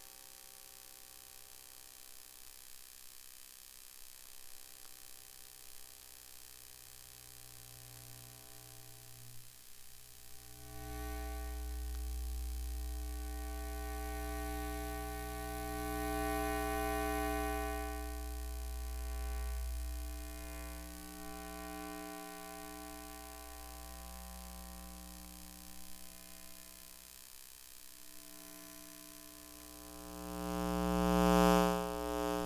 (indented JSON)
{
  "title": "Stena Line, North Sea - cabin walk",
  "date": "2022-06-26 12:12:00",
  "description": "Stena Line cabin walk",
  "latitude": "51.90",
  "longitude": "2.26",
  "timezone": "Europe/London"
}